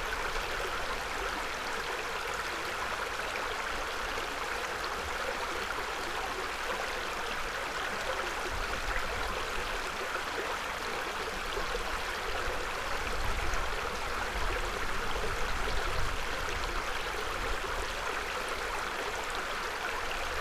Villmar, Weyer - little creek
little creek near village Weyer
Villmar, Germany, March 2012